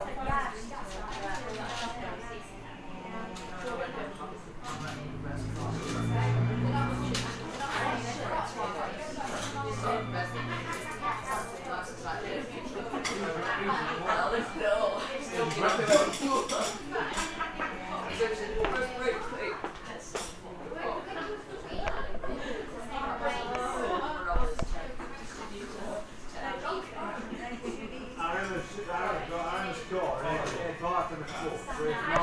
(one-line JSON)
{"date": "2009-09-22 11:37:00", "description": "Sounds inside Indego Indian Restaurant in Shirebrook, Derbyshire.", "latitude": "53.20", "longitude": "-1.21", "altitude": "92", "timezone": "Europe/London"}